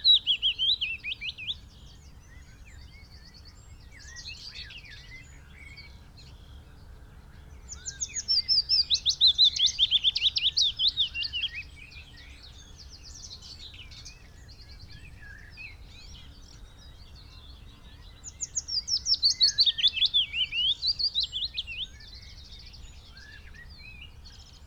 Green Ln, Malton, UK - willow warbler song ...
willow warbler song ... pre-amped mics in a SASS on tripod to Olympus LS14 ... bird calls ... song ... from ... wren ... pheasant ... red-legged partridge ... blackbird ... yellowhammer ... whitethroat ... linnet ... chaffinch ... crow ... skylark ... bird often visits other song posts before returning to this one ...
Yorkshire and the Humber, England, United Kingdom